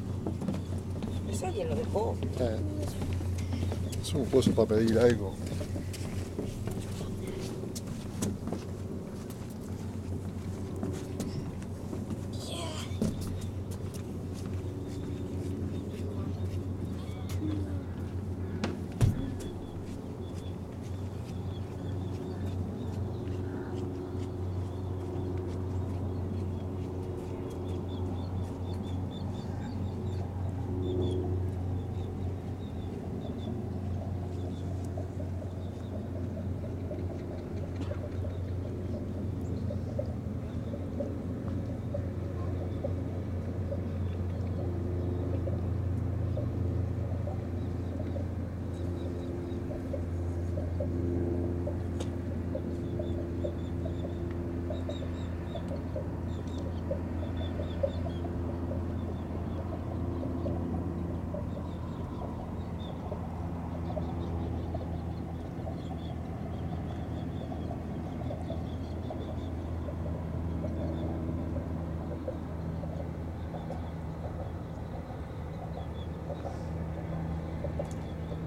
Hunting Island, SC, USA - Hunting Island State Park Pier
The area next to the bridge to Fripp Island, as heard from a fishing pier. The pier is part of hunting Island state park. The ambience is quiet, yet distinct. A series of bumps are heard to the right as cars and trucks pass over the bridge. Birds and other small wildlife can be heard. There were other visitors around, and some people pass very close to the recorder.
[Tascam Dr-100miii & Primo EM-272 omni mics]
South Carolina, United States, 26 December 2021, 16:23